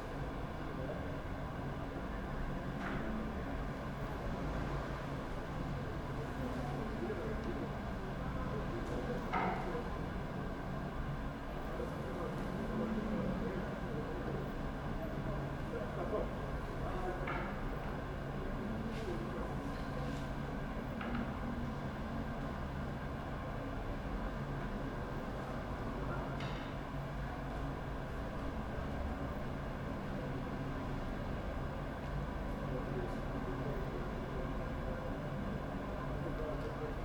with an atmosphere of distant football game